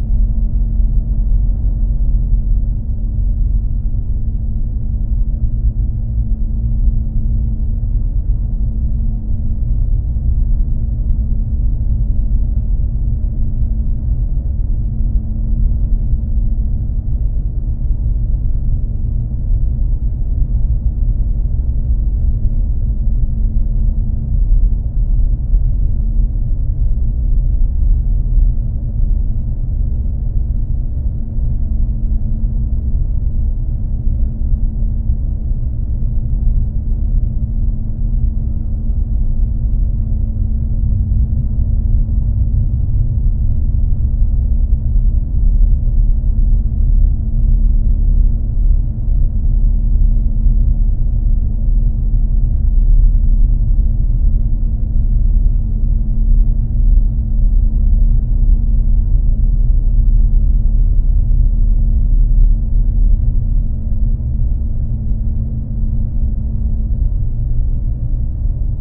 Vilnius, Lithuania, factory wall
Geophone on the matallic factory wall
Vilniaus apskritis, Lietuva, 29 May, 10:50